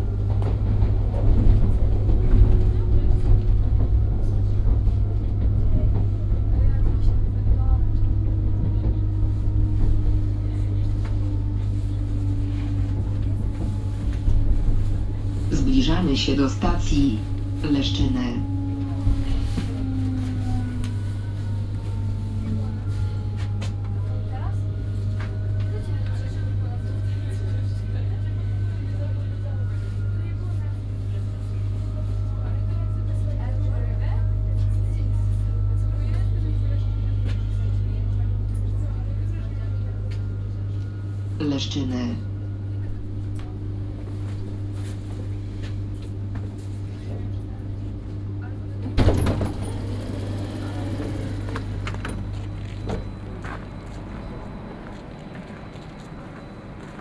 Train station, Leszczyny, Poland - (57) Arriving to Leszczyny by train
Arriving to Leszczyny by train.
binaural recording with Soundman OKM + Sony D100
sound posted by Katarzyna Trzeciak